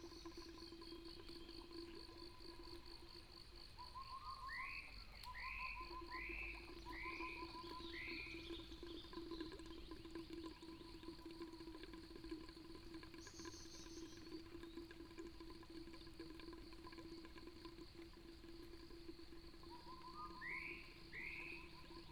Water pipes, Bird sounds, Crowing sounds, Sound of insects, Morning road in the mountains
Puli Township, 水上巷